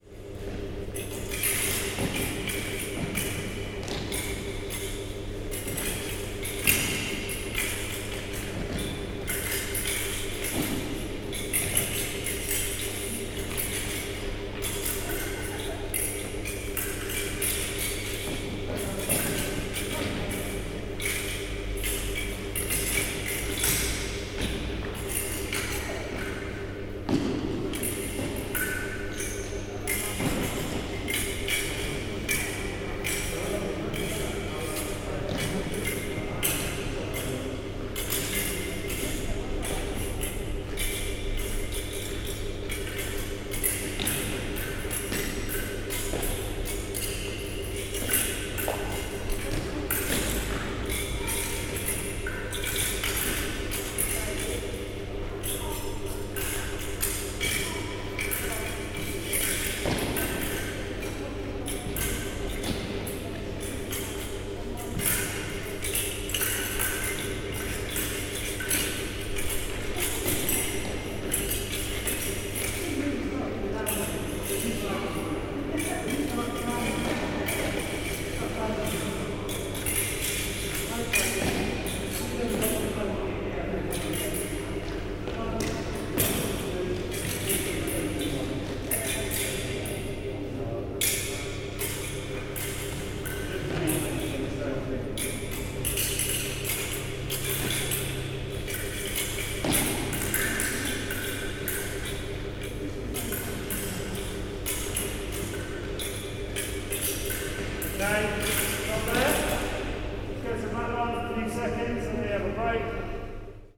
Radley, Abingdon, UK - Fencing ambience
The sounds of a fencing class in action, practising their moves as part of a beginners course in fencing for all ages. Large sports hall ambience with extractor fan and metallic fencing sounds..